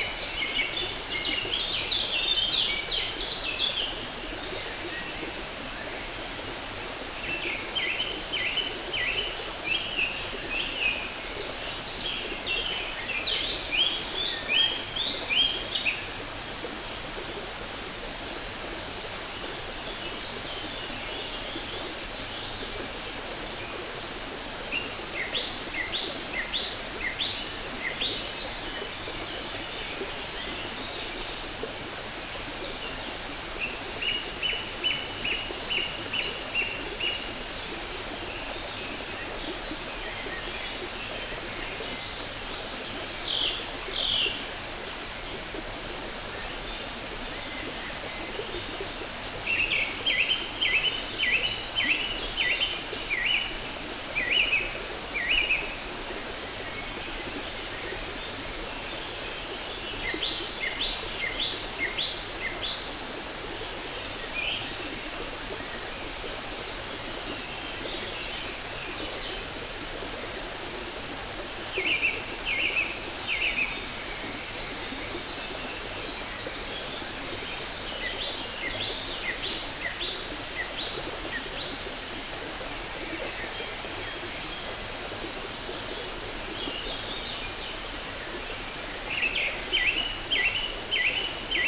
Grgar, Grgar, Slovenia - Near stream Slatna
Stream and birds in the forest. Recorded with Sounddevices MixPre3 II and LOM Uši Pro